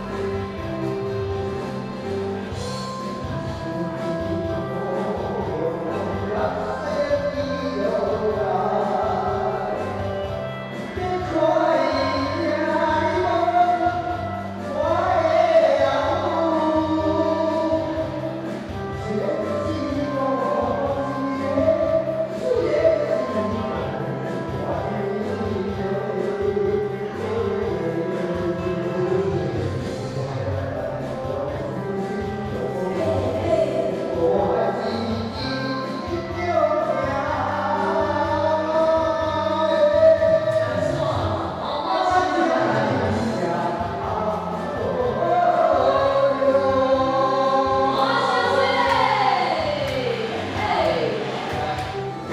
Daren St., Tamsui Dist., Taiwan - Karaoke
Folk Evening party, Dinner Show, Host, Karaoke
Zoom H2n Spatial audio
New Taipei City, Taiwan